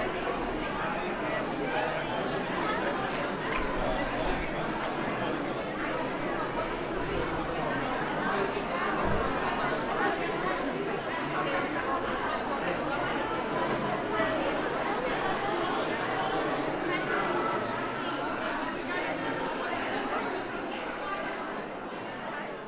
Altes Gymnasium, Oldenburg, Deutschland - entrance hall ambience
entrance, main hall, school starts after summer holidays, ambience
(Sony PCM D50, Primo EM172)